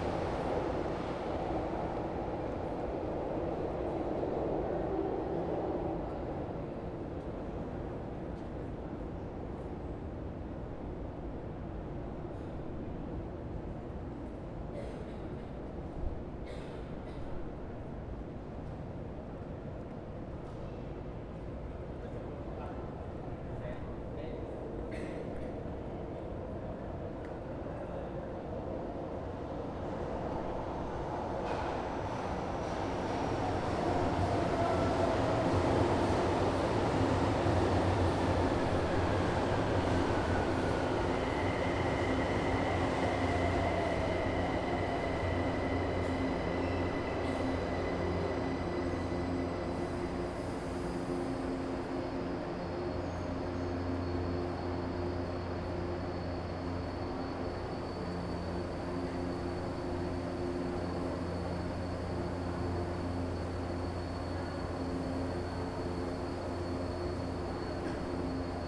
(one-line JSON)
{"title": "Av. Paulista - Bela Vista, São Paulo - SP, 01310-200, Brasil - São Paulos Subway - Consolação", "date": "2018-10-03 13:03:00", "description": "Inside Consolacão Subway station at Paulista Avenue, São Paulo, Brazil. Recorded with TASCAM DR-40 with internal microphones.", "latitude": "-23.56", "longitude": "-46.66", "altitude": "840", "timezone": "America/Sao_Paulo"}